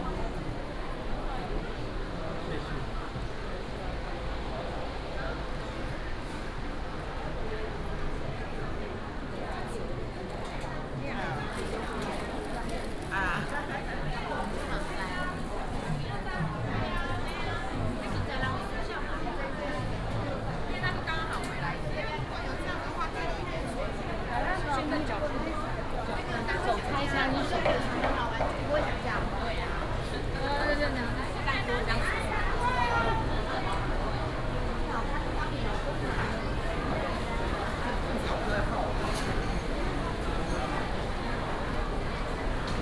興國公有市場, Zhongli Dist. - in the market
Walking in the market
23 June, 11:09